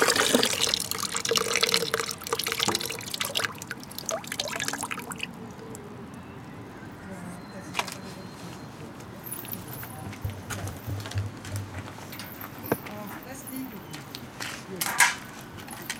gotha, kjz big palais, beim aquaeduktbau mit kindern - beim aquaeduktbau
kinder bauen aus holzteilen und mit eimern und blechbüchsen ein aquaedukt und probieren es dann aus. stimmen, wasserplätschern, lachen, verkehr, passanten.
Gotha, Germany, 9 August 2012